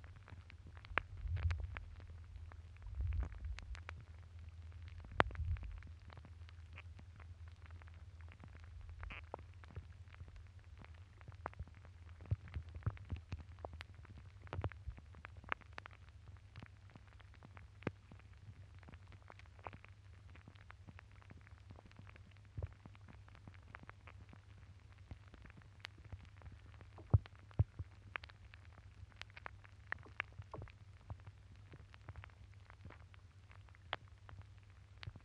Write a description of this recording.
Hydrophone recording in ditch of frozen water